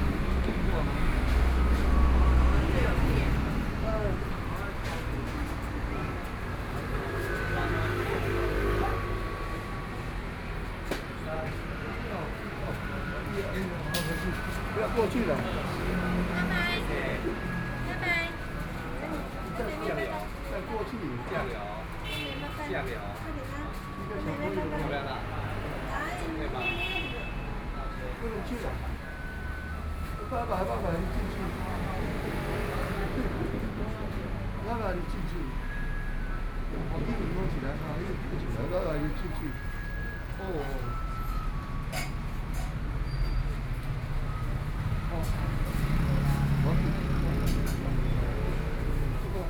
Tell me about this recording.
Traffic Sound, The elderly and children, Binaural recordings